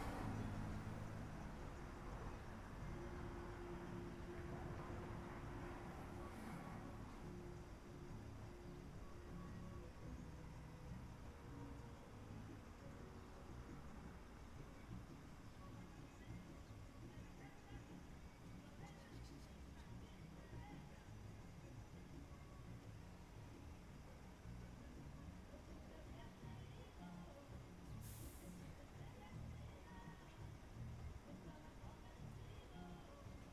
Jackson Heights, Queens, NY, USA - Outside All American Suds Laundromat
Sitting Outside The Laundromat. You can hear music through a the closed windows of a car.
East Elmhurst, NY, USA, 3 March, 14:20